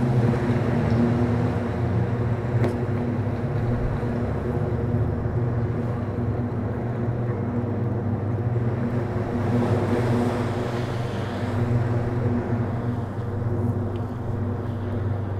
Cullercoats, Sea waves and air flow through found open plastic pipe
Sea waves and air flow through found open plastic pipe
14 January, 12:31